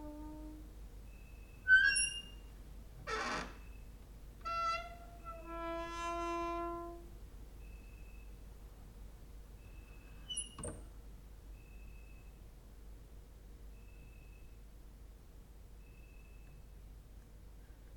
Mladinska, Maribor, Slovenia - late night creaky lullaby for cricket/7
cricket outside, exercising creaking with wooden doors inside